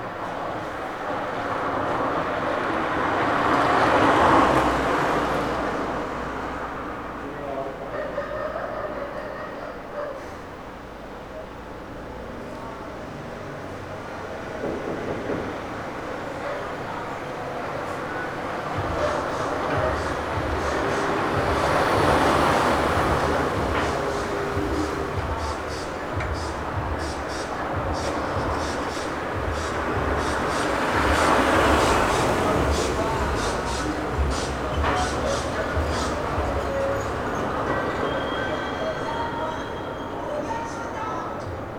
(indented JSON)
{
  "title": "R. Padre Feliciano Domingues - Jardim Mariliza, São Paulo - SP, 02965-140, Brasil - R. Padre Feliciano Domingues, 269 - Jardim Mariliza, São Paulo - SP, Brasil",
  "date": "2019-04-30 20:46:00",
  "description": "Paisagem sonora noturna.",
  "latitude": "-23.48",
  "longitude": "-46.70",
  "altitude": "768",
  "timezone": "America/Sao_Paulo"
}